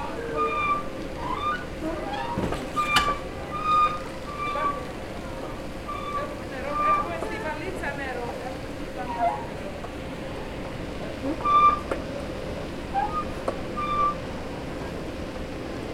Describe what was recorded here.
The airport is rather busy, especially the passage between train station and airport. An escelator could need some oil, but then the beautiful noises will vanish. There are a lot of anouncements asking the passengers to stick to the Covid-19-regulations.